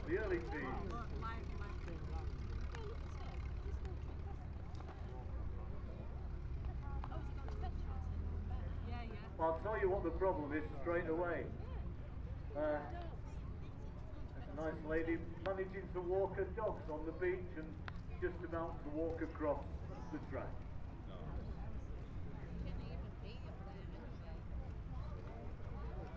S Cliff, Bridlington, UK - race the waves ...
race the waves ... beach straight line racing ... motorbikes ... cars ... vans ... flat beds ... americana ... xlr sass on tripod to zoom h5 ...